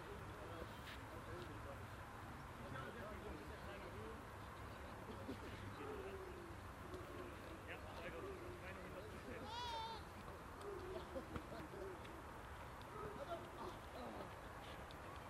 monheim, sportplatz, fussballtraining
soccer training on a sunday morning
project: :resonanzen - neanderland soundmap nrw: social ambiences/ listen to the people - in & outdoor nearfield recordings